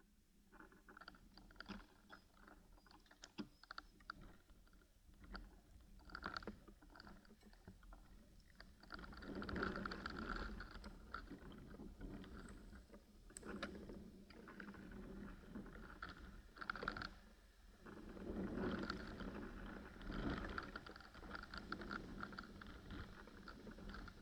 contact microphone on a fallen branch just near small village cemetery